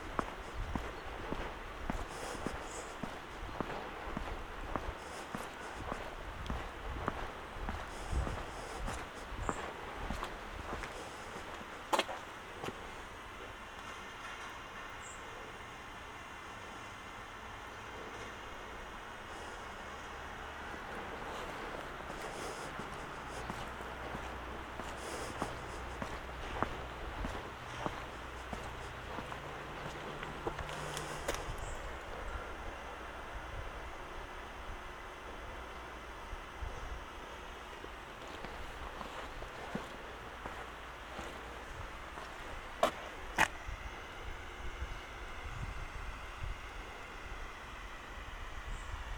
burg/wupper: müngstener straße - bring it back to the people: miniatures for mobiles soundwalk
miniatures for mobiles soundwalk (in a hurry)
a test walk through my miniature "heimat, liebe"; from müngstener straße to eschbachstraße
bring it back to the people: november 27, 2012